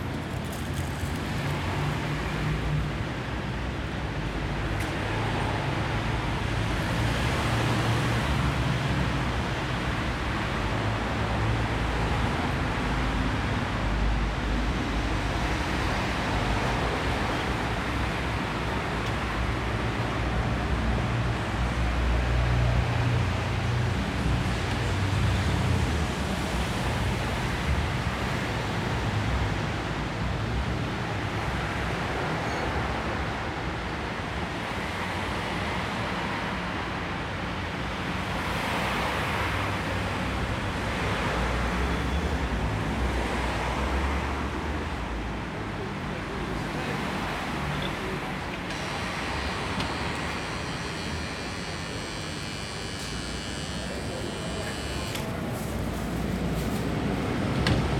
Wollankstraße, Soldiner Kiez, Wedding, Berlin, Deutschland - Wollankstraße 96, Berlin - Street traffic, in front of a bread shop
Wollankstraße 96, Berlin - Street traffic, in front of a bread shop. Wollankstraße is a street with heavy traffic.
[I used Hi-MD-recorder Sony MZ-NH900 with external microphone Beyerdynamic MCE 82]
Wollankstraße 96, Berlin - Straßenverkehr, vor einem Backshop. Die Wollankstraße ist eine stark befahrene Straße.
[Aufgenommen mit Hi-MD-recorder Sony MZ-NH900 und externem Mikrophon Beyerdynamic MCE 82]
14 October 2012, 15:44